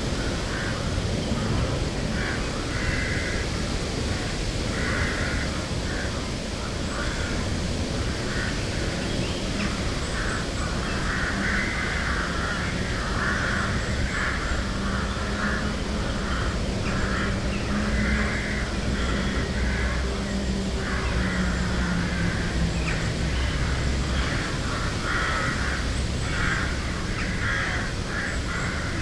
cakovice, park, rooks
Colony of nesting rooks, one of the biggest in Prague.